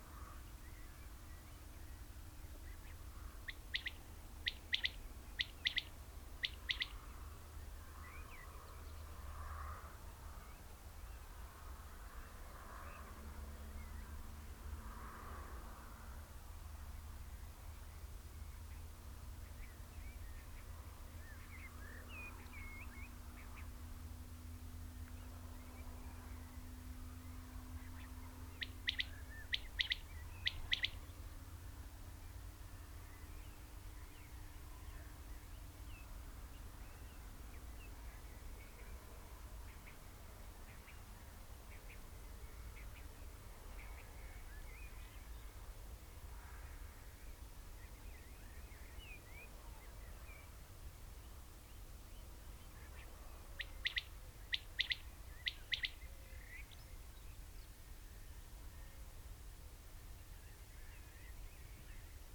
Luttons, UK - Quails calling at dusk ...
Quails calling ... song ..? binaural dummy head on tripod to minidisk ... bird calls from corn bunting ... skylark ... blackbird ... red-legged partridge ... grey partridge ... fireworks and music at 30:00 ... ish ... the bird calls on ... background noise ...
Malton, UK, 12 June